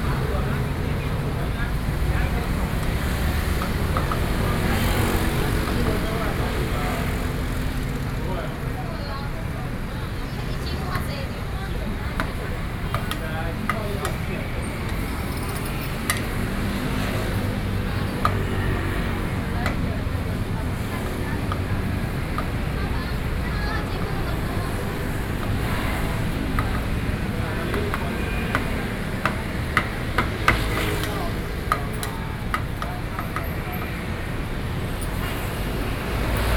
Sanchong, New Taipei city - Corner
Sanzhong District, New Taipei City, Taiwan